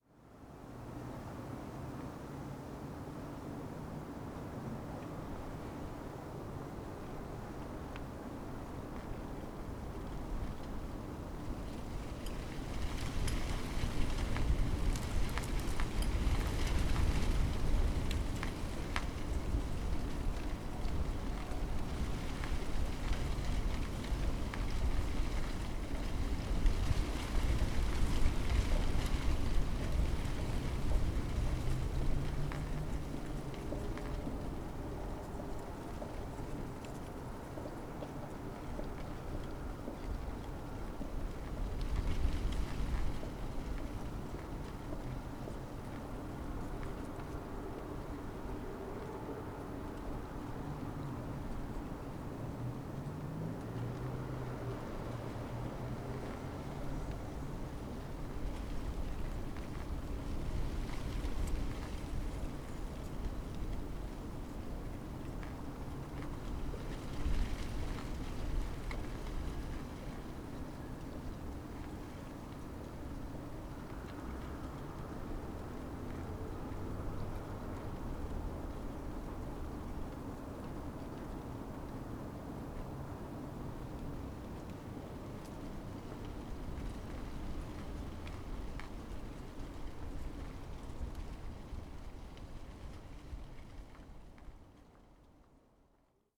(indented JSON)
{
  "title": "berlin, friedelstraße: vor kulturverein kinski - the city, the country & me: in front of kinski club",
  "date": "2012-01-04 01:57:00",
  "description": "ivy leaves fluttering in the wind\nthe city, the country & me: january 4, 2012",
  "latitude": "52.49",
  "longitude": "13.43",
  "altitude": "46",
  "timezone": "Europe/Berlin"
}